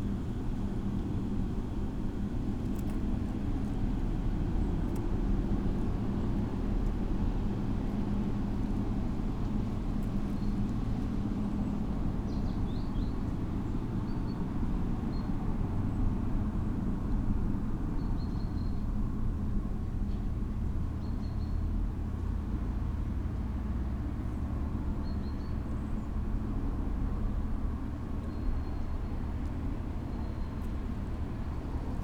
Veliuona, Lithuania, on the mound
small microphones placed in the stone altar on Veliuona mound